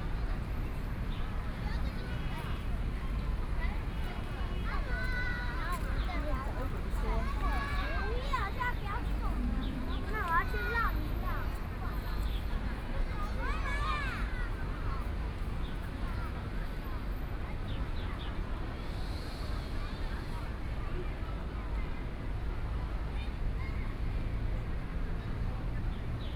Xinbeitou, Taipei - In the Park
in the Park